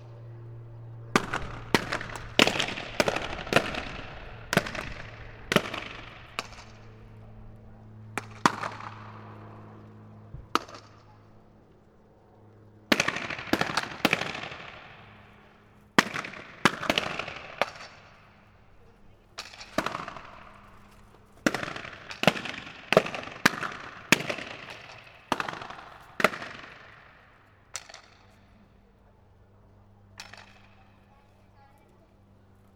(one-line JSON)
{"title": "water tank, near Parque Cultural de Valparaíso, Chile - Memoria Transiente, performance", "date": "2015-12-02 19:15:00", "description": "abandoned water tank, Panteon, near Parque Cultural de Valparaíso. Memoria Transiente, performance by Colectivo Juan Jaula\n(Sony PCM D50)", "latitude": "-33.05", "longitude": "-71.63", "altitude": "51", "timezone": "America/Santiago"}